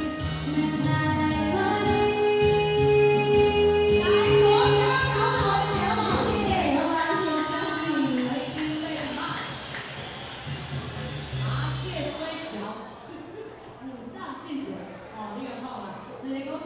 Daren St., Tamsui Dist., Taiwan - Folk party
Folk Evening party, Dinner Show, Host
Zoom H2n MS+XY
New Taipei City, Taiwan, 22 June 2015, 19:52